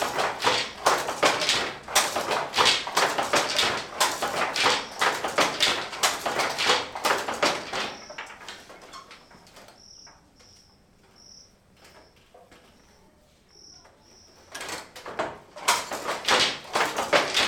Bukoto, Kampala, Uganda - loom
room with men working on handlooms, recorded with a zoom h2, using 2channelsurround mode